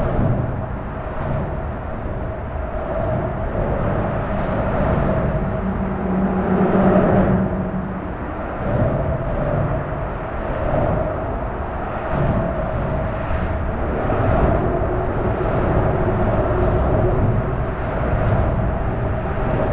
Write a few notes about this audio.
strange and unfriendly place: unlighted parking under motorway bridge, suburban train arrives at the station close to the bridge, the city, the country & me: april 10, 2013